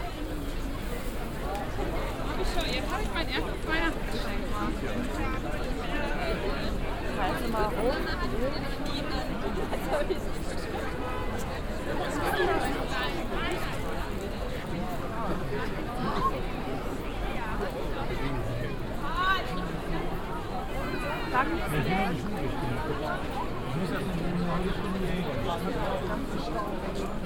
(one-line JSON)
{"title": "cologne, altstadt, alter markt, weihnachtsmarkt", "date": "2008-12-23 17:47:00", "description": "abendliche ambience des traditionellen weihnachtsmarktes auf dem kölner alter markt\nsoundmap nrw - weihnachts special - der ganz normale wahnsinn\nsocial ambiences/ listen to the people - in & outdoor nearfield recordings\nsoundmap nrw - weihnachts special - der ganz normale wahnsinn\nsocial ambiences/ listen to the people - in & outdoor nearfield recordings", "latitude": "50.94", "longitude": "6.96", "altitude": "58", "timezone": "Europe/Berlin"}